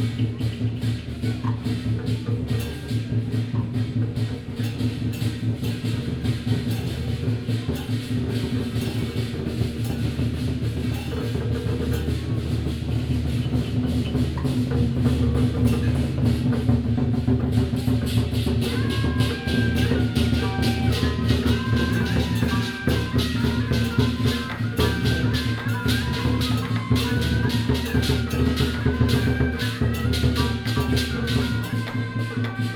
Chenghuangtempel van Taiwansheng, Taipei - Traditional Festivals
Nanguan, Traditional Festivals, Through a variety of traditional performing teams, Binaural recordings, Zoom H6+ Soundman OKM II